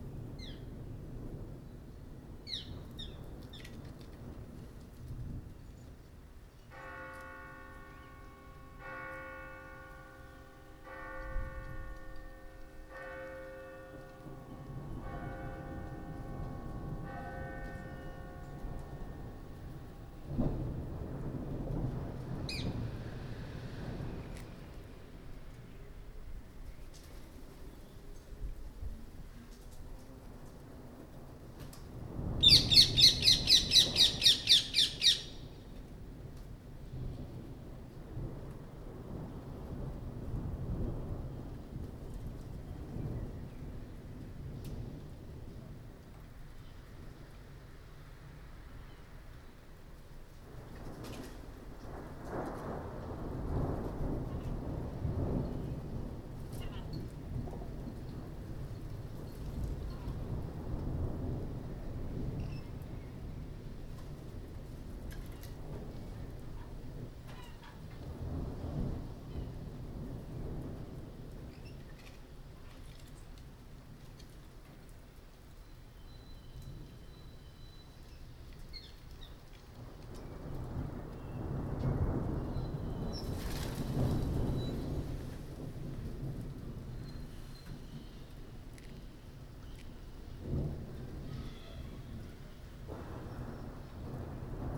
Recorded on a roofterrace. Thunder: Rose ringed parakeets and pigeons are eating but eventually they flee the rain that turns into hail a few minutes later. You can also hear the Carillon of the Grote Kerk.
Binaural recording.
10 June 2011, 18:00